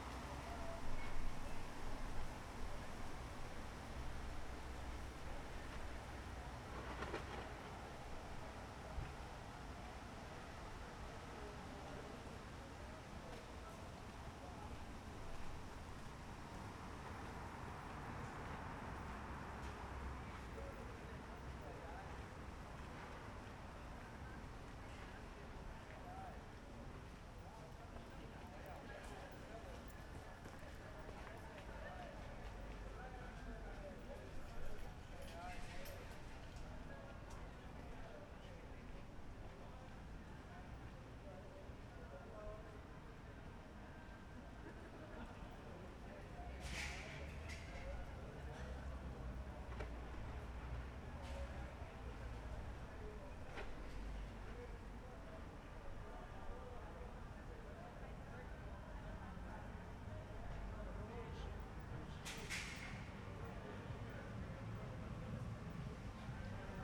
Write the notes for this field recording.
night ambience at landwehrkanal, berlin, wind.